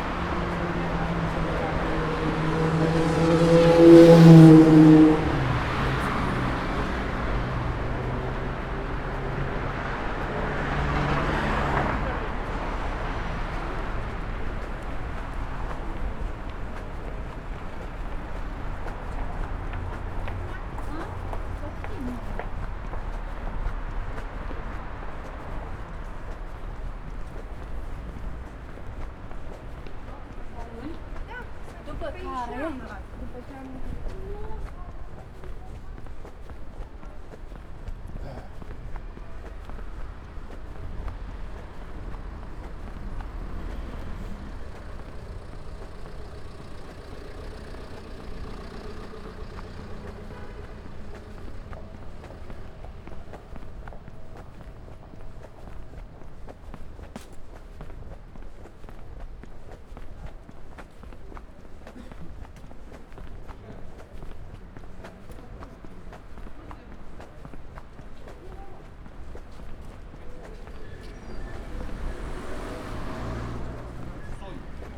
Bulevardul geberal Gheorghe Magheru, walking to Calea Victoriei
Romania, 22 November 2011, 13:25